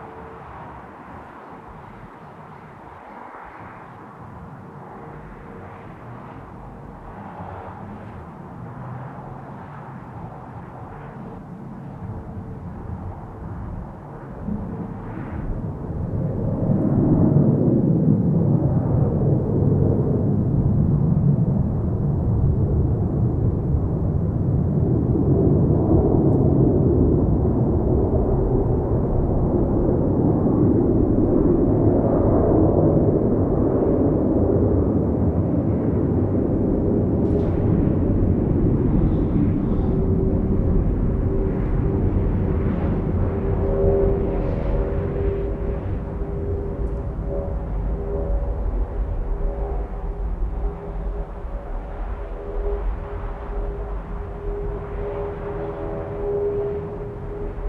Herentalsebaan, Wommelgem, Belgium - Plane liftoff distance
recorded and created by Kevin Fret
with zoom H4N and a pair of AKG C1000S XY pattern 120° trough